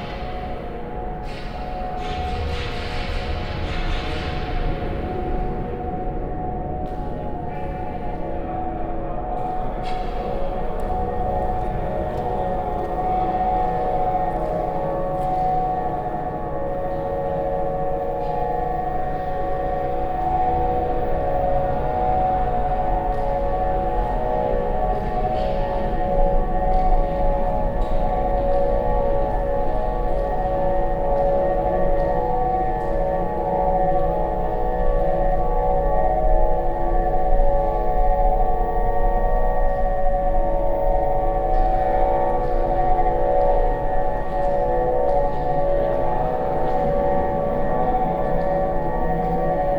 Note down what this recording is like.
Inside the center hall of the bridge. The sound of a mechanic installation by the artist group "Therapeutische Hörgruppe Köln" during the Brueckenmusik 2013. soundmap nrw - social ambiences, art spaces and topographic field recordings/